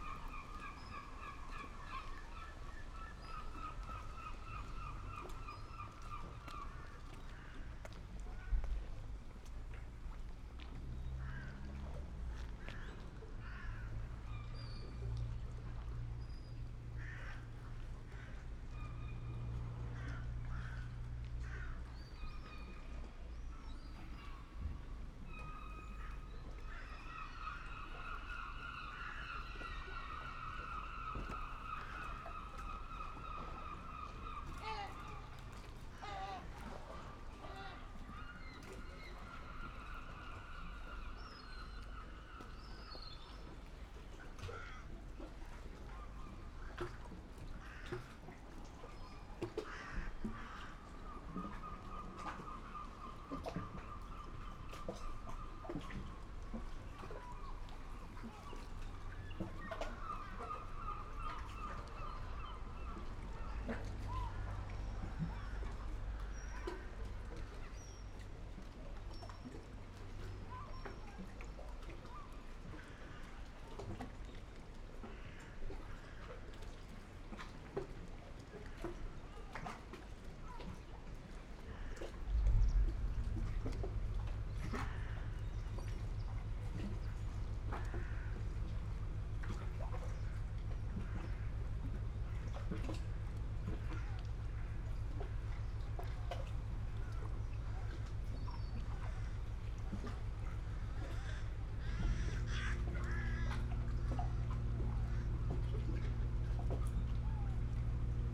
PORT DE PECHE AU REPOS Gamle Sørvågen, Sørvågen, Norvège - MOUETTES ET CLAPOTIS PORT DE PECHE
MOUETTES ET CLAPOTIS dans le port de Reine. Il est midi et tout est calme, pêcheurs, touristes et circulation.
Original record MixPré6II + DPA 4041 dans Cinela PIA2
Nordland, Norge, 18 August 2021